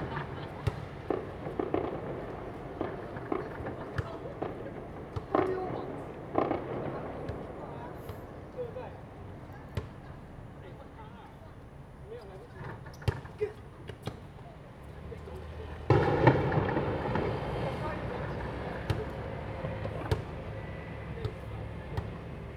Firecrackers and fireworks, basketball, Traffic sound, lunar New Year
Zoom H2n MS+XY
Taoyuan City, Taiwan, 18 February 2018